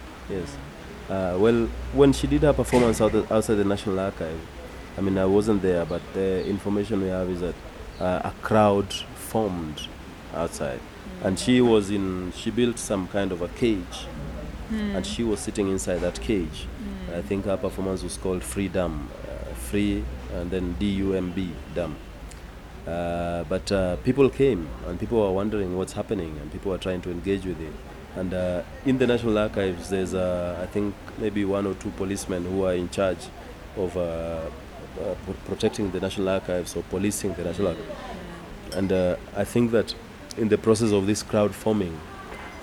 GoDown Art Centre, South B, Nairobi, Kenya - What culture are we talking about...?
… I had been starting the recording somewhere in the middle of talking to Jimmy, so here Jimmy describes Ato’s performance in more detail…
11 May 2010